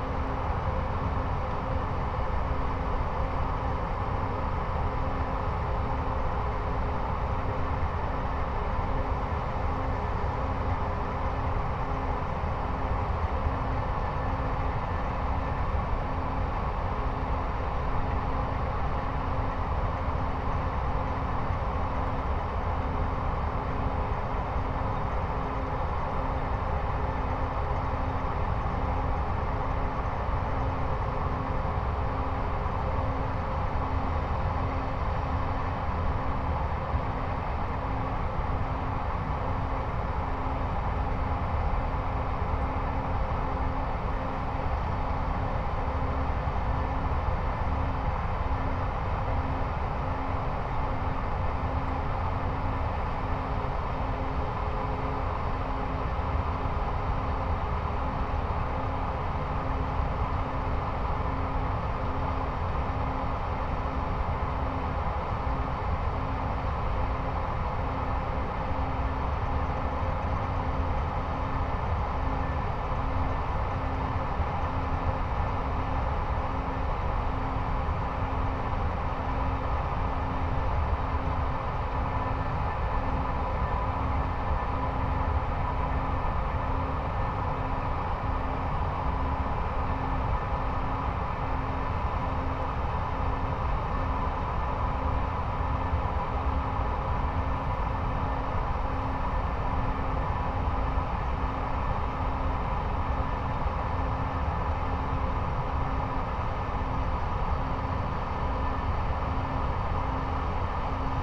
lignite mine, near Mariánské Radčice / Bílina, Tschechien - open pit drone
constant sounds from conveyers and excavators at the open pit, around midnight (Sony PCM D50, Primo EM172)
Bílina, Czechia, 23 September, 00:10